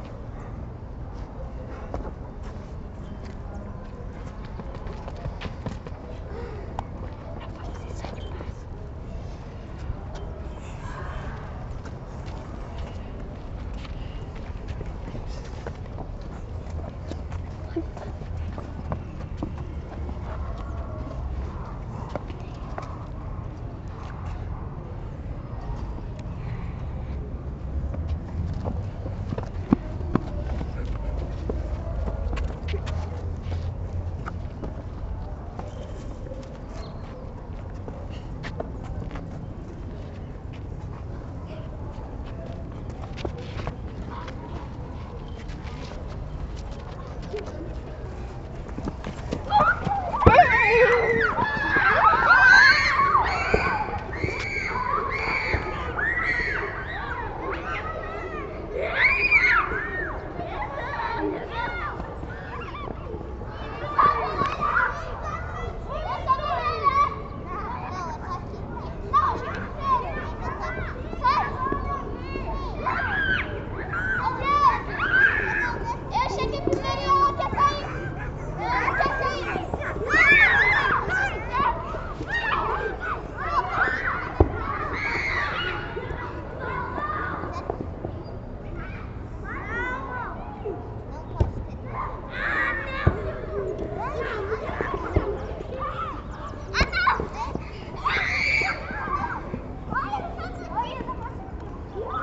Florianópolis, SC, Brasil - Soccer field - Campo de futebol - CA/UFSC
Soundscape lesson, Music Class.